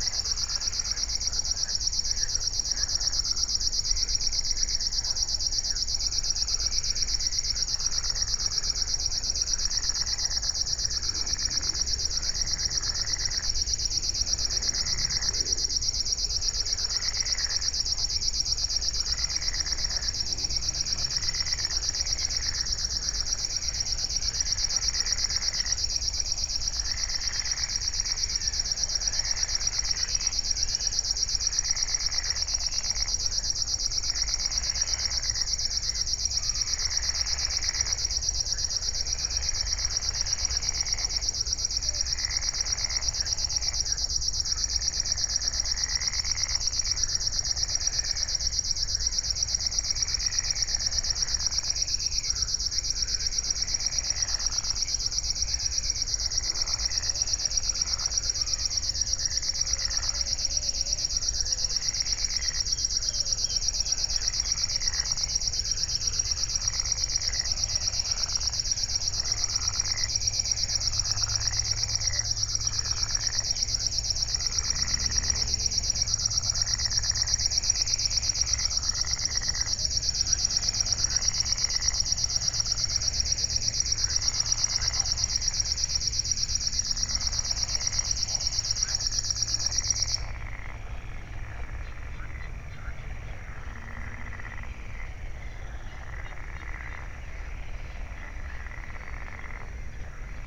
01:18 Berlin, Buch, Moorlinse - pond, wetland ambience